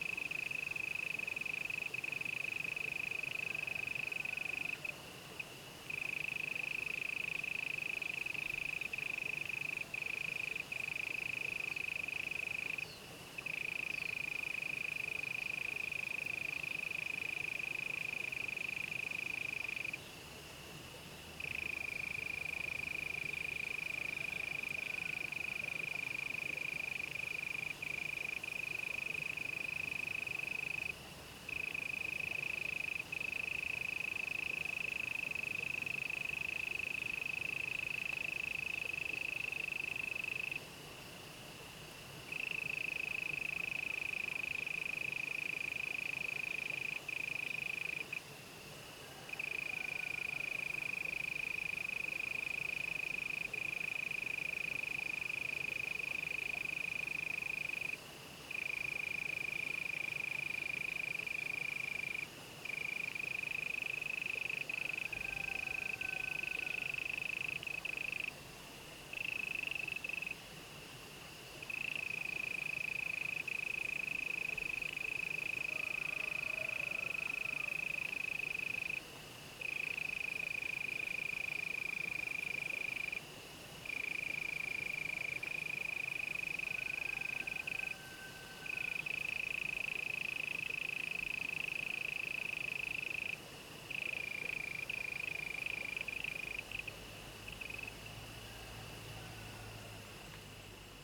{"title": "茅埔坑溪, 茅埔坑溼地公園 Puli Township - In the stream", "date": "2015-04-30 06:57:00", "description": "Bird calls, Insect sounds, Early morning, Crowing sounds, sound of water streams\nZoom H2n MS+XY", "latitude": "23.94", "longitude": "120.94", "altitude": "470", "timezone": "Asia/Taipei"}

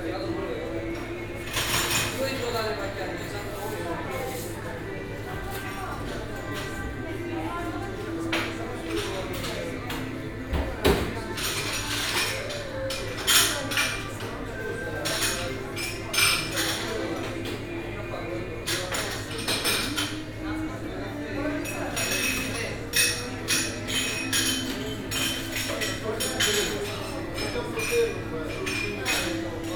{"title": "milazzo, harbour - cafe bar, early morning", "date": "2009-10-18 06:20:00", "description": "cafe bar in the harbour area, night shifters of all kind are here after a stormy night", "latitude": "38.22", "longitude": "15.24", "altitude": "8", "timezone": "Europe/Berlin"}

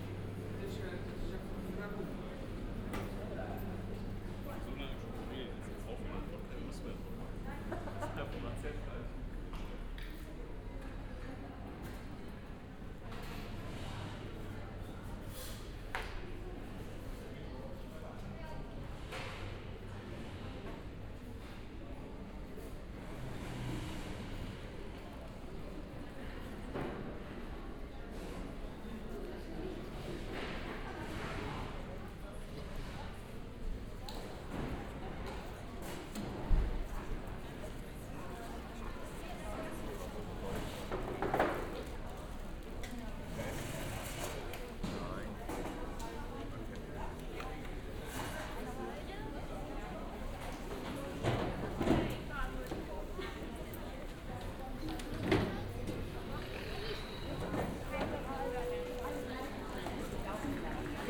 {"title": "Hahn airport - walk in hall", "date": "2010-10-11 08:40:00", "description": "walk through Hahn airport hall. binaural, use headphones", "latitude": "49.95", "longitude": "7.27", "altitude": "485", "timezone": "Europe/Berlin"}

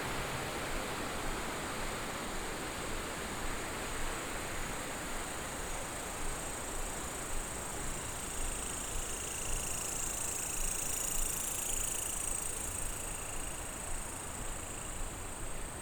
{"title": "桃米巷, 桃米里, Puli Township - Walking on the road", "date": "2015-09-03 21:11:00", "description": "Walking on the road, Frogs chirping, Insects called, Traffic Sound", "latitude": "23.94", "longitude": "120.93", "altitude": "466", "timezone": "Asia/Taipei"}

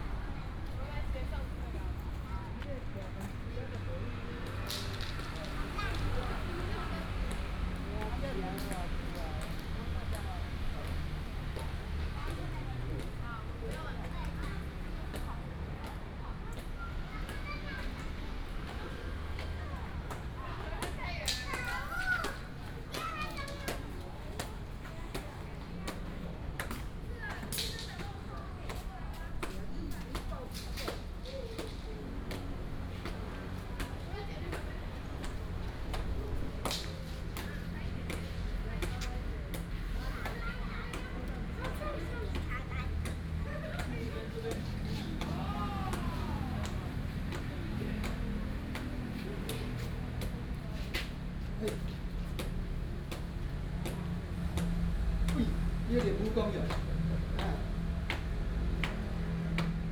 {"title": "法治公園, Da’an Dist., Taipei City - in the Park", "date": "2015-07-30 17:52:00", "description": "in the Park, The elderly and children", "latitude": "25.03", "longitude": "121.55", "altitude": "18", "timezone": "Asia/Taipei"}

